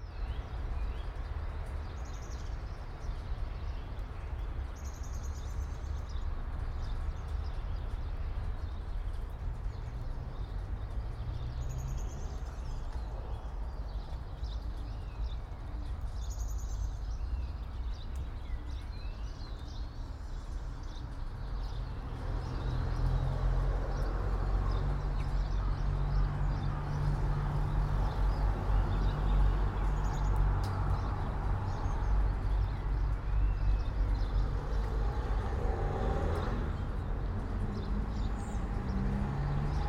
all the mornings of the ... - mar 16 2013 sat
Maribor, Slovenia, March 2013